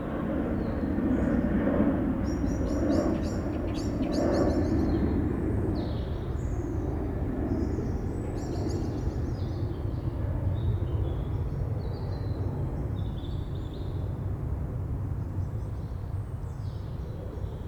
Campolide, Portugal - Pedreira da Serafina
Recorded at an old quarry. Serafina.Lisbon.
Lisboa, Portugal, 5 November 2014